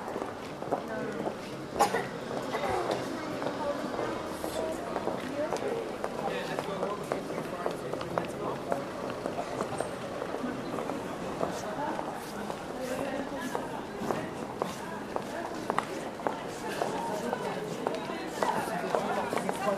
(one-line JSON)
{"title": "Paris, Rue Montorgueil", "date": "2010-12-30 18:29:00", "description": "Closing time of seafood and fruit shops on Rue Montorgueil. Every business has an end.", "latitude": "48.87", "longitude": "2.35", "altitude": "49", "timezone": "Europe/Paris"}